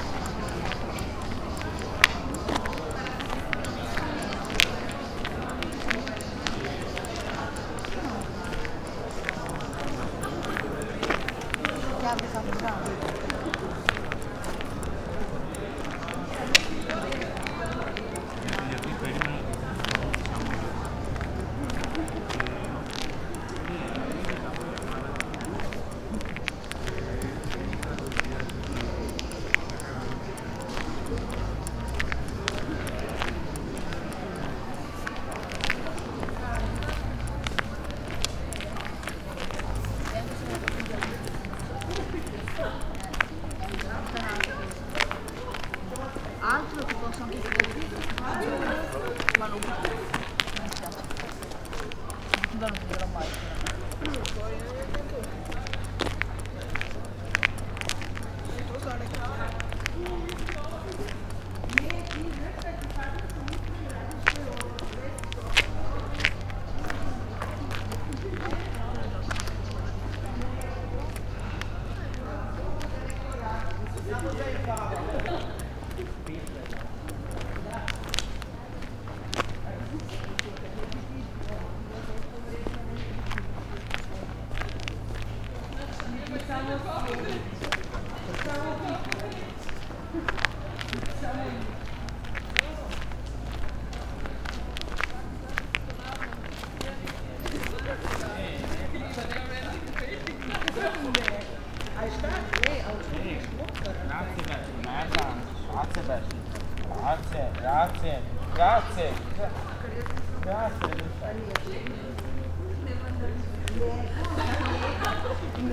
spring evening, birds, passers by, people talking, stony streets, yard ...
Venezia, Italy, 6 May 2015